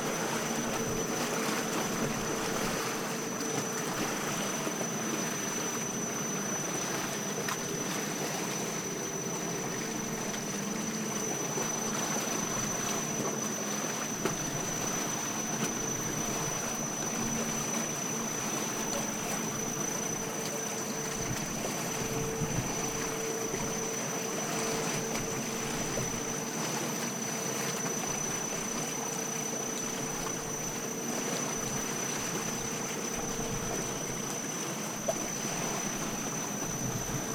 Vaxön-Tynningö-Bogesund-Granholmen, Vaxholm, Suecia - cableway at sea
Curiós transport marítim que es mou gràcies a un cable.
Curious shipping moving through a cable.
Curioso transporte marítimo que se mueve gracias a un cable.
Vaxholm, Sweden, 15 August 2016